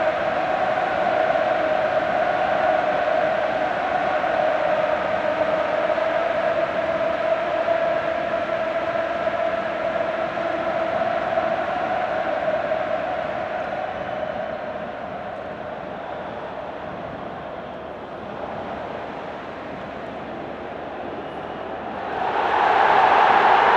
Bd Michelet, Marseille, France - Stade Vélodrome - Marseille - Euros 2016
Stade Vélodrome - Marseille
Demi finale Euro 2016 - France/Allemagne
Prise de son et ambiance à l'extérieure du stade.
Provence-Alpes-Côte dAzur, France métropolitaine, France